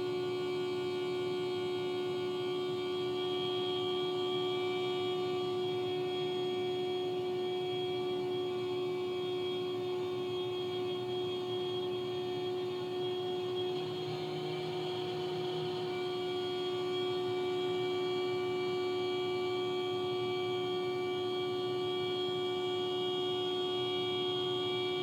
Ave, Queens, NY, USA - Unintentional Horn-Drone Performance
The sound of a car's horn parked nearby. The horn sounded for at least 3 to 4 hours, waking up the surrounding neighborhood.
United States, 26 March 2022, 01:30